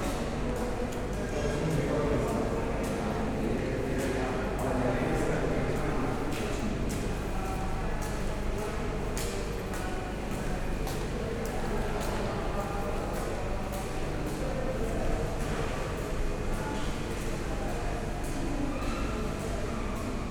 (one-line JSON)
{"title": "Praha, Poliklinika Palackého - passage", "date": "2012-10-04 10:05:00", "description": "passage ambience, short walk\n(SD702, DPA4060)", "latitude": "50.08", "longitude": "14.42", "altitude": "207", "timezone": "Europe/Prague"}